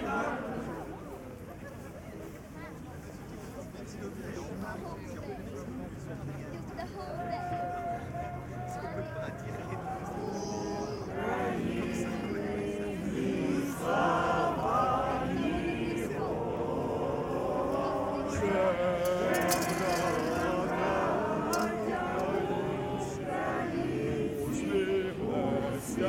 Av. des Arts, Saint-Josse-ten-Noode, Belgique - Demonstration - songs for Ukraine
Songs - hymns.
Tech Note : Ambeo Smart Headset binaural → iPhone, listen with headphones.
Brussel-Hoofdstad - Bruxelles-Capitale, Région de Bruxelles-Capitale - Brussels Hoofdstedelijk Gewest, België / Belgique / Belgien, 6 March